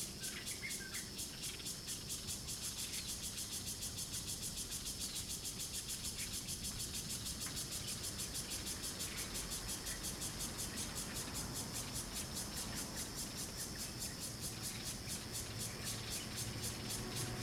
三和海濱公園, Taimali Township - in the Park
Birdsong, Cicadas sound, Traffic Sound, Very hot weather
Zoom H2n MS+XY